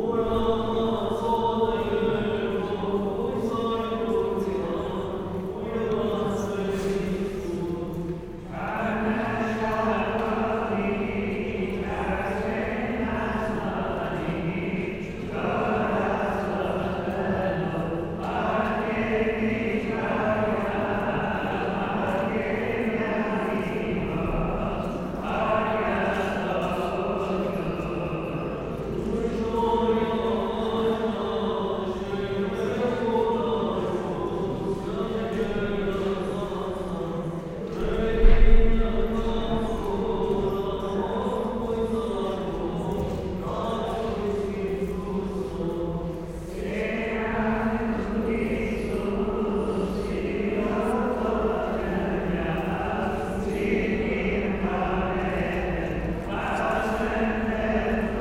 Gyumri, Arménie - Holy mysteries (sacraments)
Orthodox Deacon and Priest sing during the first part of the church service : preparing the sacraments. Everyone is moving into the church, so people make a lot of noise.
Gyumri, Armenia, 9 September, ~09:00